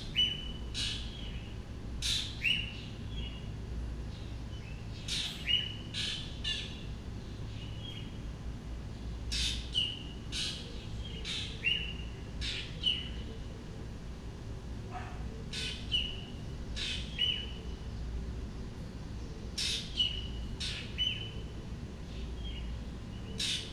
No., Fuqun Street, Xiangshan District, Hsinchu City, Taiwan - Summer Sunrise
At daybreak, birds call from various distances away, within the Fuqun Gardens community. Recorded from the front porch. Stereo mics (Audiotalaia-Primo ECM 172), recorded via Olympus LS-10.